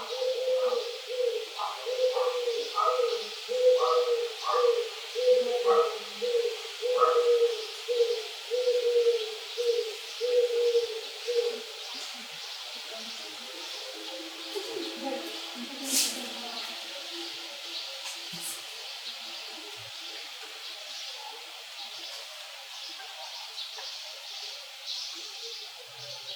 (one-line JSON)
{"title": "вулиця Трудова, Костянтинівка, Донецька область, Украина - тайный разговор", "date": "2019-06-08 11:41:00", "description": "Шум ветра, воркование голубей и голоса двух собеседниц\nЗвук: Zoom H2n и Boya 1000l", "latitude": "48.54", "longitude": "37.69", "altitude": "104", "timezone": "Europe/Kiev"}